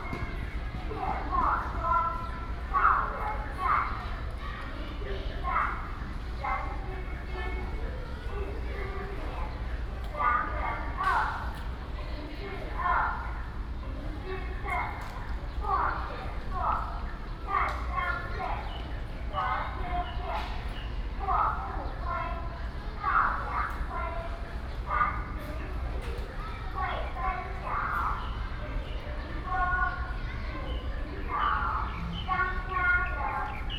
Puli Elementary School, Nantou County - In Elementary School
In Elementary School, Cleaning time ㄝ
Puli Township, Nantou County, Taiwan, 19 May 2016, 07:54